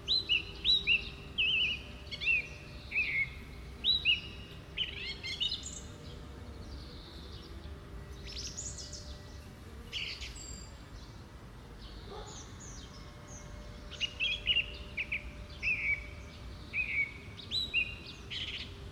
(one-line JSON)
{"title": "Площа Перемоги, Костянтинівка, Донецька область, Украина - Весенние птицы и насекомые", "date": "2019-04-10 07:59:00", "description": "Пение птиц, насекомые. Звуки производства и утренний шум улицы", "latitude": "48.52", "longitude": "37.69", "altitude": "119", "timezone": "Europe/Kiev"}